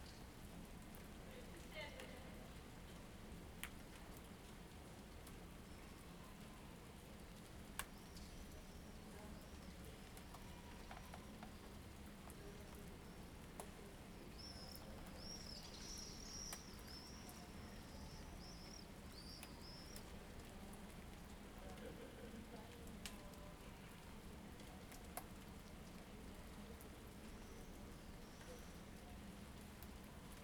Ascolto il tuo cuore, città, I listen to your heart, city. Several chapters **SCROLL DOWN FOR ALL RECORDINGS** - Rainy Sunday with swallows in the time of COVID19 Soundscape
"Rainy Sunday with swallows in the time of COVID19" Soundscape
Chapter L of Ascolto il tuo cuore, città, I listen to your heart, city.
Sunday April 19th 2020. Fixed position on an internal terrace at San Salvario district Turin, fifty days after emergency disposition due to the epidemic of COVID19.
Start at 5:15 p.m. end at 6:15 p.m. duration of recording 01:00:00.
Torino, Piemonte, Italia, 19 April 2020